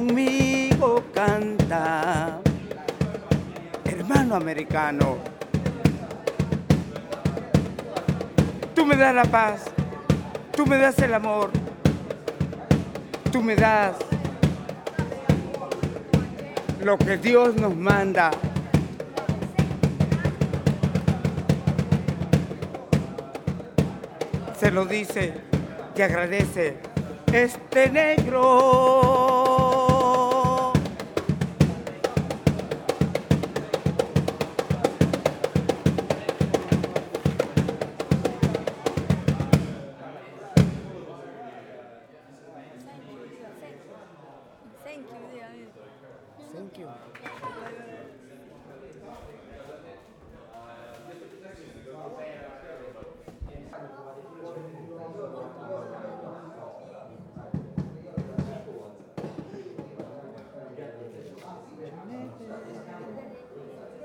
Malecón Maldonado, Iquitos, Peru - music @
street musician @ the boulevard in Iquitos.